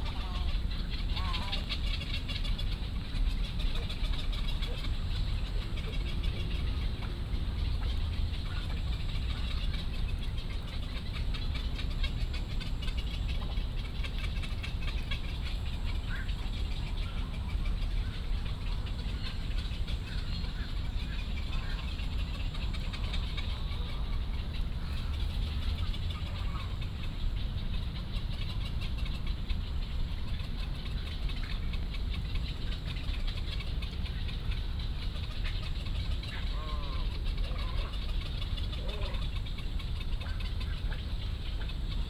Daan Forest Park, Taipei city - Bird calls
in the Park, Bird calls
Da’an District, Taipei City, Taiwan